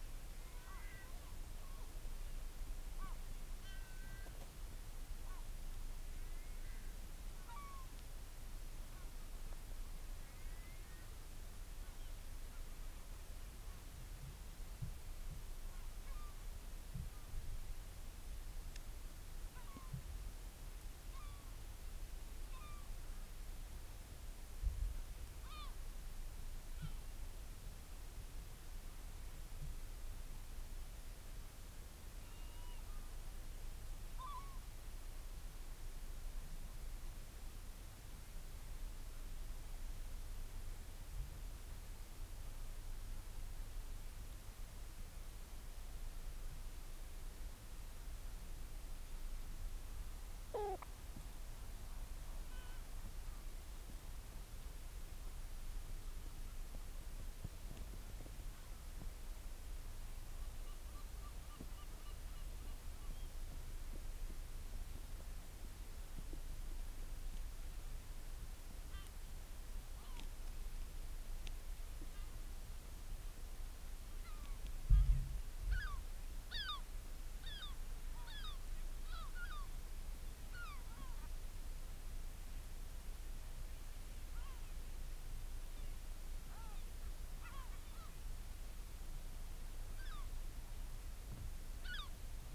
Marloes and St. Brides, UK - european storm petrel ...
Skokholm Island Bird Observatory ... storm petrels ... quiet calls and purrings ... lots of space between the calls ... open lavaliers clipped to sandwich box on top of a bag ... clear calm evening ...
Haverfordwest, UK, 16 May 2016